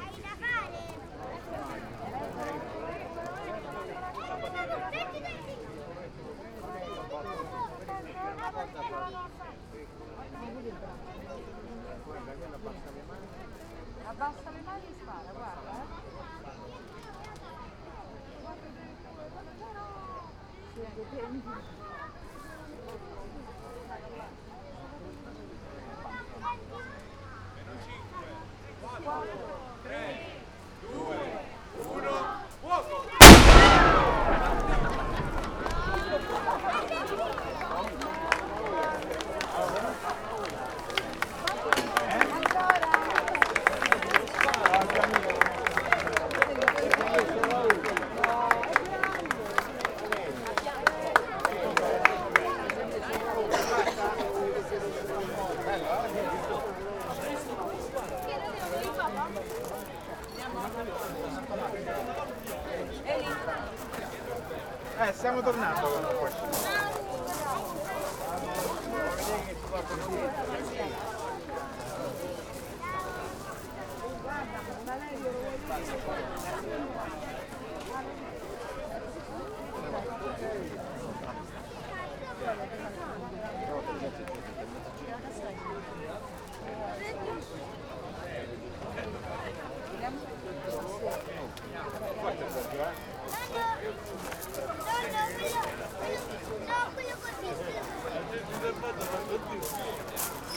Rome, Janiculum - cannon fire at Gianicolo hill
A cannon is fired daily at Janiculum hill. The tradition started in 1947. Back then the cannon gave the sign to the surrounding belltowers to start ringing at midday. The cannon is fired exactly at noon and the command to fire it is sent via cell phone form one of the Italian atomic clocks. attention - the shot comes about 2:44 and it's really loud compared to the rest of the recording.